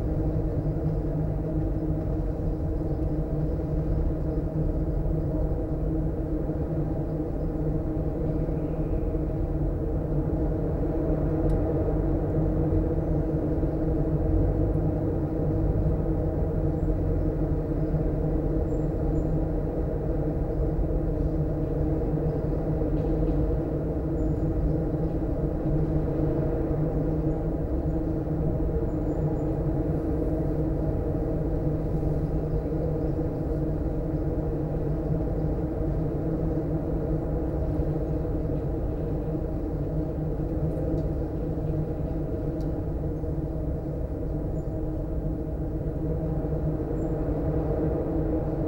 water pipe inside old swimming pool, wind (outside) SW 19 km/h
Cerro Sombrero was founded in 1958 as a residential and services centre for the national Petroleum Company (ENAP) in Tierra del Fuego.